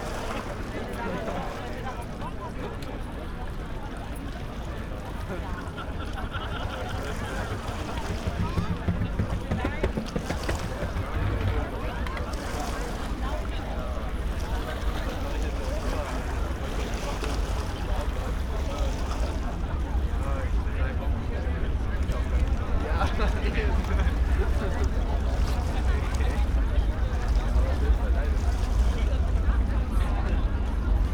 Rheinboulevard, Deutz, Köln - evening ambience at river Rhein
not yet finished Rheinboulevard, a large terrace alongside river Rhein, between Deutzer and Hohenzollern bridge. People enjoying sunset
(Sony PCM D50, Primo EM172)